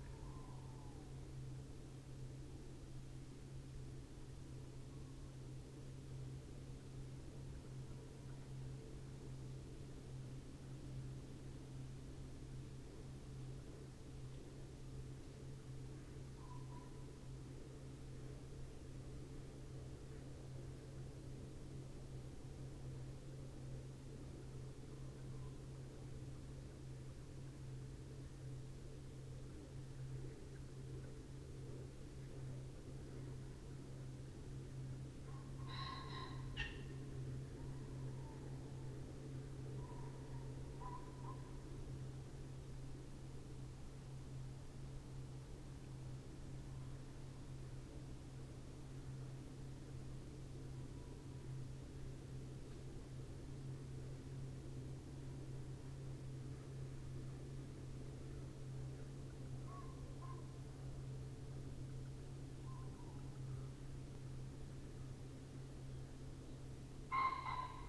Green Ln, Malton, UK - the wood wakes up ...
the wood wakes up ... pre-amped mics in SASS ... bird calls ... song ... from tawny owl ... pheasant ... wood pigeon ... red-legged partridge ... buzzard ... robin ... blackbird ... song thrush ... wren ... background noise and traffic ... something walks through at 17:00 ... could be roe deer ...